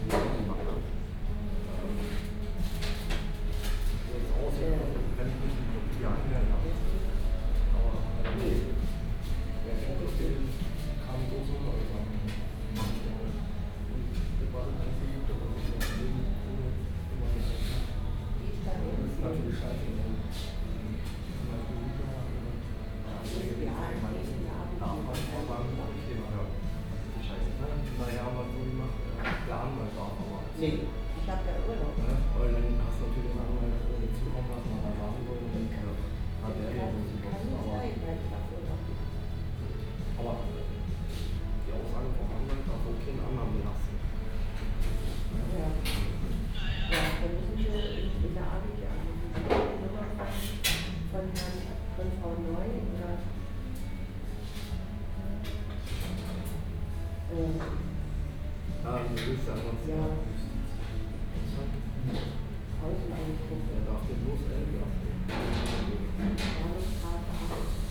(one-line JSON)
{"title": "Markcafe, Templin, Deutschland - cafe ambience", "date": "2016-11-19 14:30:00", "description": "Templin, Marktcafe ambience before christmas\n(Sony PCM D50, OKM2)", "latitude": "53.12", "longitude": "13.50", "altitude": "62", "timezone": "GMT+1"}